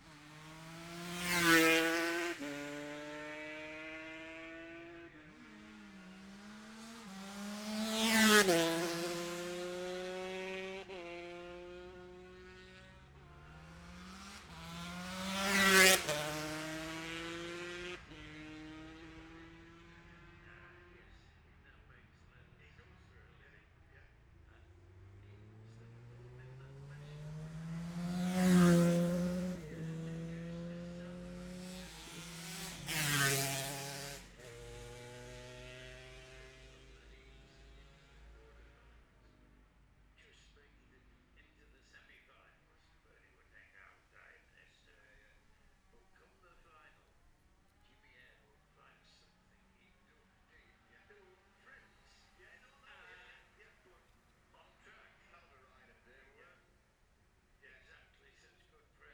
the steve henshaw gold cup ... lightweight practice ... dpa 4060s clipped to bag to zoom h5 ...
Scarborough, UK, 16 September 2022